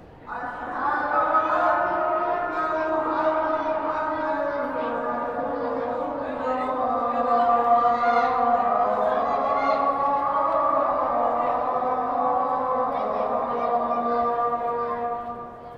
Sony PCM D-100, internal mics, part of the call for prayer, inside the courtyard of the huge mosque
20 December, ~13:00